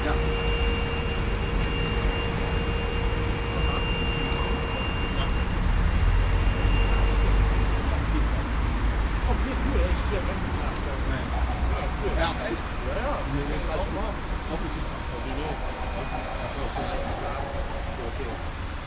seiji morimoto @ staalplaat berlin
seiji morimoto cleaned the storefront window (with contact micros) at staalplaat.